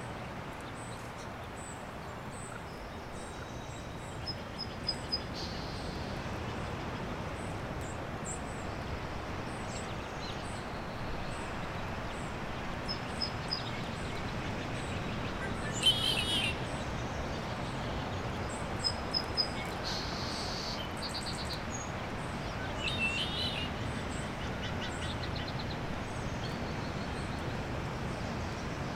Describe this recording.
a number of birds calling at a small lagoon behind the beach